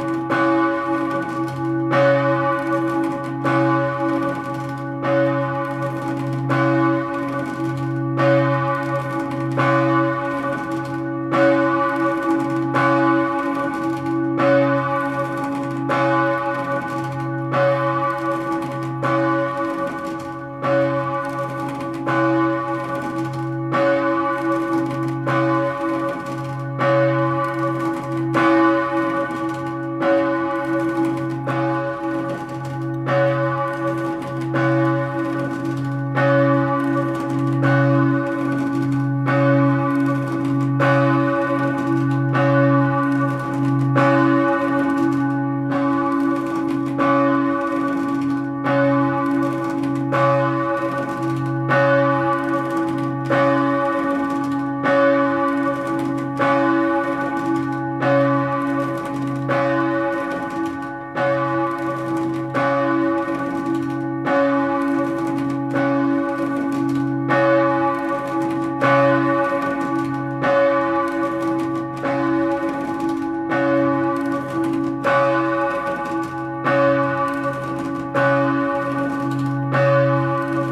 Montée Notre Dame du Château, Allauch, France - appel à loffice de 9h00
la cloche appelle les fidèles pour la messe de 9h00
the bell calls the faithful for the Mass of 9:00